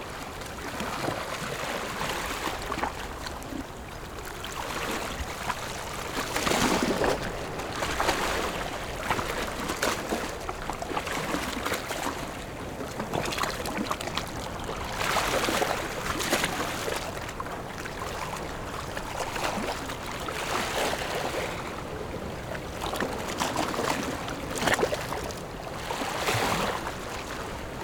頭城鎮大里里, Yilan County - Sound of the waves
On the coast, Sound of the waves
Zoom H6 MS mic+ Rode NT4